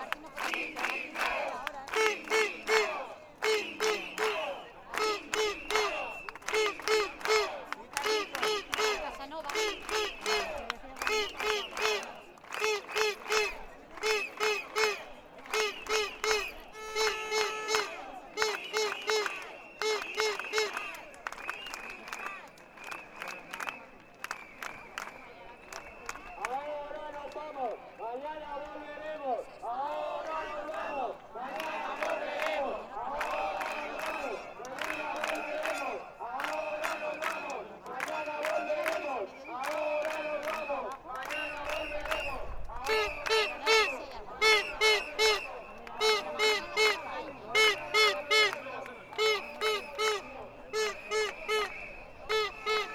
Manifestació Sanitat
Movement against the economic cuts in the health service, afecting what is understood as a service and not as an elit privilege.